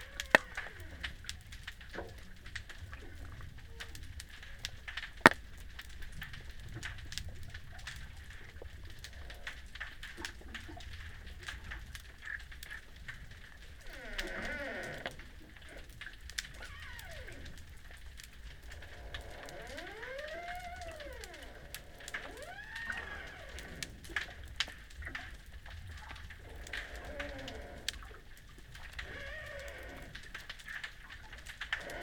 Kissamos, Crete, underwater at the abandoned ship
hydrophone in the waters right at the abandoned ship